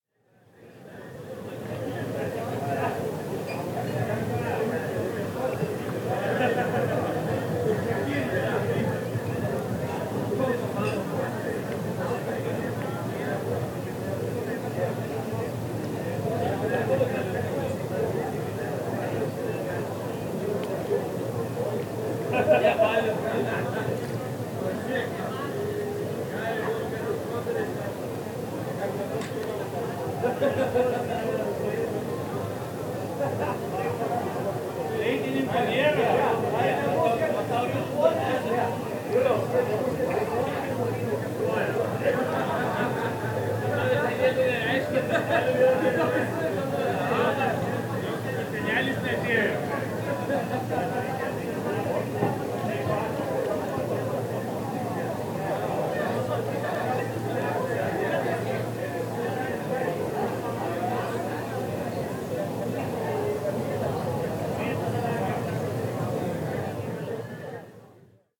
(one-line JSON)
{"title": "Lithuania - North Pier at Night", "date": "2016-07-30 00:21:00", "description": "Recordist: Anita Černá\nDescription: Night recording on the pier. People talking, subtle water sounds and wind. Recorded with ZOOM H2N Handy Recorder.", "latitude": "55.30", "longitude": "21.01", "timezone": "Europe/Vilnius"}